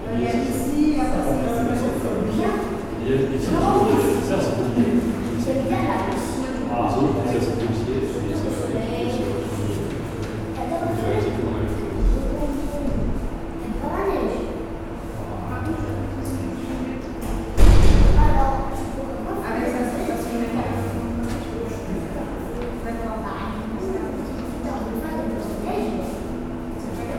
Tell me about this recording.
In the wide hall of the Maintenon station. It's a small city but huge train center, as it's quite near from Paris. The main door creaks since 30 years !